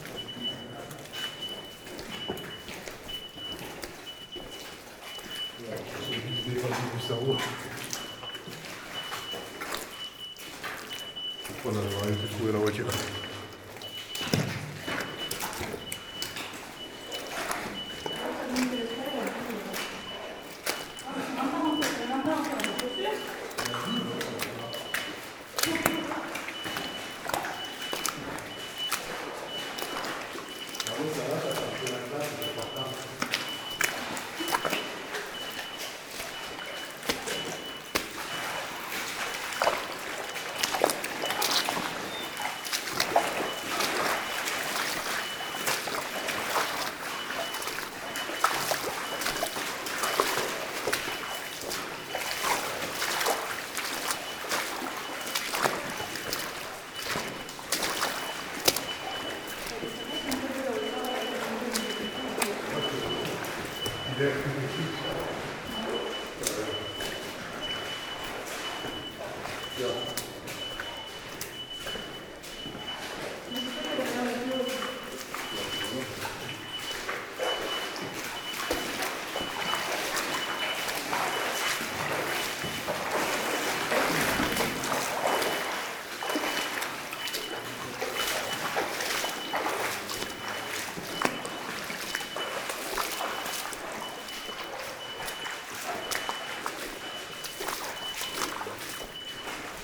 Moyeuvre-Grande, France - Asphyxiant gas in the mine
Exploring a district in the underground mine where asphyxiant gas level is high. We have some Drager gas detectors. At 2:50 mn, the first detector is shouting, telling us it's dangerous. There's not enough oxygen (16% oxygen, this is 50% the oxygen you need in a normal level, and very too much carbon dioxide). We are going more far than dangerous, that's why it's shouting hardly during all the recording. In fact, we try to reach some stairs, written on the map, in aim to climb to an upper level. It's not very distant from the tunnel where we are. It would means a better air, because carbon dioxide is heavier than air. Unfortunately, the stairs are too far for us, going there would means to reach a district where oxygen level is 14%. This kind of level causes death within 5 to 10 minuts, no more. It means we encountered a defeat and we can't explore an entiere district where there's an enormous stone crusher (written on the map as a gigantic machine).
14 January, ~09:00